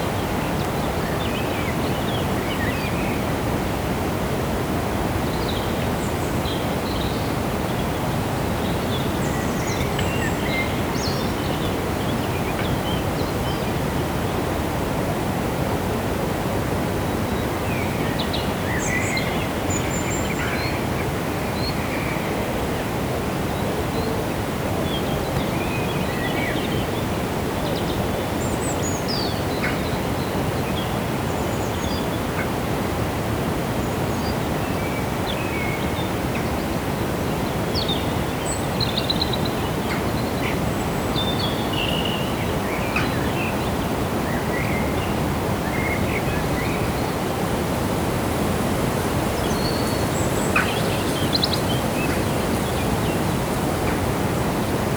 Fermignano PU, Italia - Sosta nel sentiero
Ho usato uno Zoom H2n con il filtro antivento nuovo di pacca.
29 March 2018, 11:00